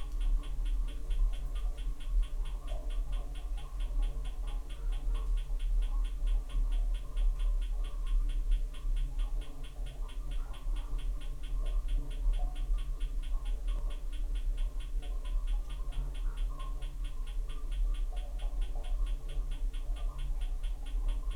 Lukniai, Lithuania, in a well
some old well found in a meadow. actually very silent sound, I've normalized it